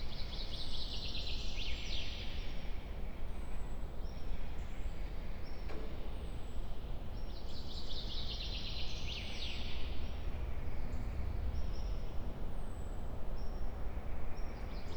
ex Soviet military base, Vogelsang - inside abandoned cinema
ex Soviet military base, Garnison Vogelsang, forest sounds heard inside former cinema / theater
(SD702, MKH8020)
Zehdenick, Germany, 16 June 2017, ~12:00